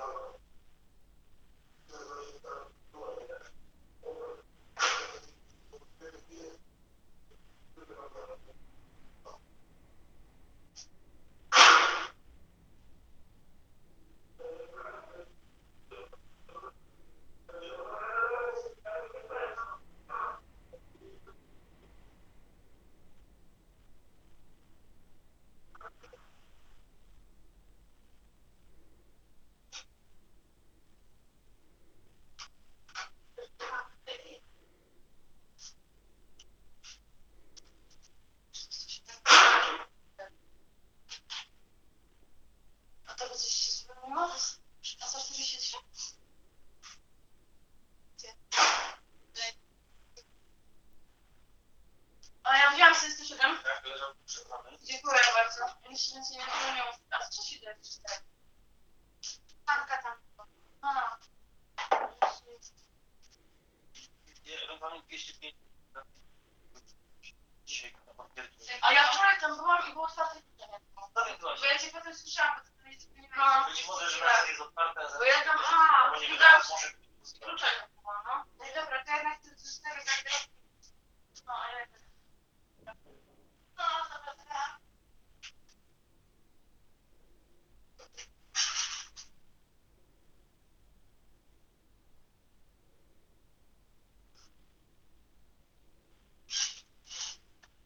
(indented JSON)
{
  "title": "Poznan, Mateckiego street - speaker phone phone speaker into mic",
  "date": "2018-05-07 15:06:00",
  "description": "it's a recording of a phone call. My friend had to put away his phone but he didn't disconnect the call so I turned on the loud speaker on my phone and placed the recorder next to it. You can hear distorted conversations and noises form the reception desk at the Grand Theater in Poznan. There is speaker installed in the reception room and a microphone on the other side of a glass window. It's used to talk to the receptionist and it picks up all the sounds from the staircase, back entrance. You can hear sounds from this speaker as well. You will also notice the whole recording is choppy due to nosie gate effect commonly used by cell phone operators in order to remove background noise from the person who isn't talking. (sony d50)",
  "latitude": "52.46",
  "longitude": "16.90",
  "altitude": "99",
  "timezone": "Europe/Warsaw"
}